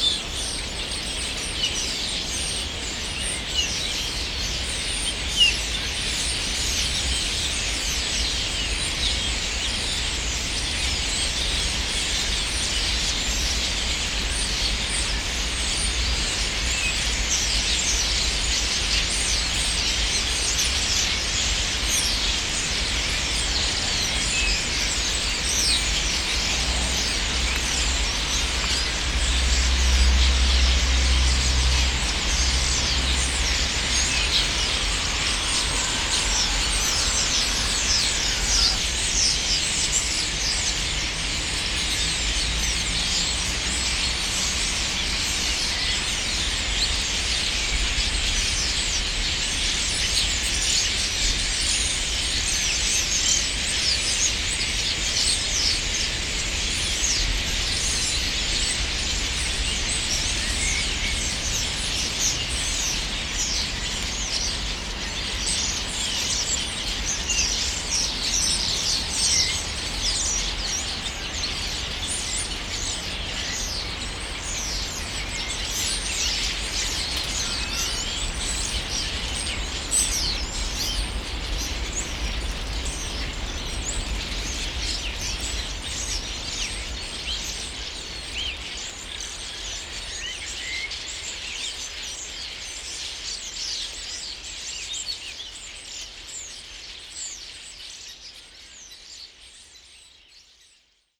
Památník Boženy Němcové, Slovanský ostrov, Praha, Czechia - Spring gathering of starlings
Evening suddently arrived flogs of starlings to Prague. Recorded with Zoom H2N.
sonicity.cz